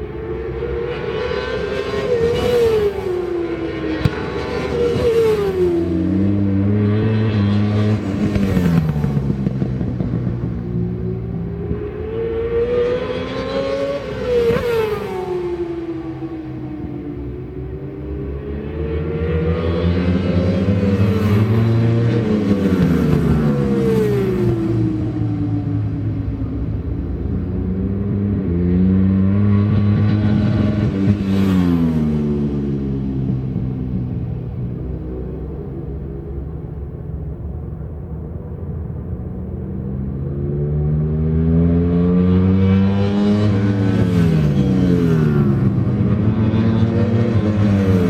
West Kingsdown, UK - World Super Bikes 2000 ... superbikes ...
World Super Bikes race one ... Dingle Dell ... Brands Hatch ... one point stereo to mini-disk ... most of race ...
West Kingsdown, Longfield, UK, 15 October 2000, 12:00